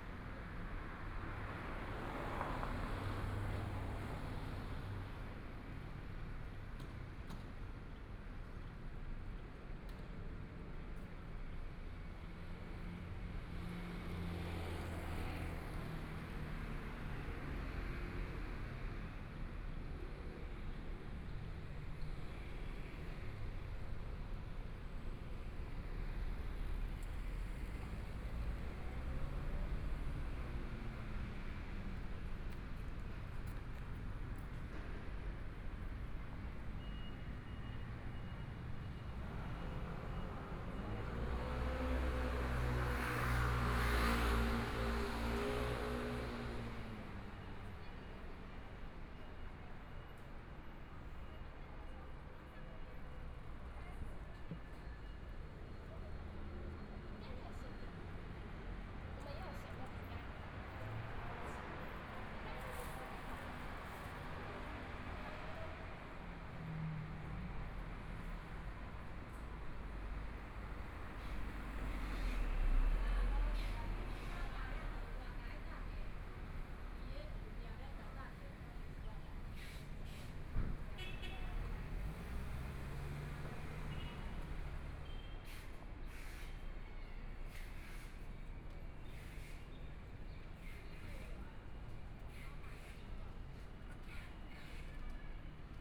At the intersection, Traffic Sound, Through different shops and homes
Please turn up the volume
Binaural recordings, Zoom H4n+ Soundman OKM II
Zhongshan District, Taipei City, Taiwan, 17 February 2014, 16:20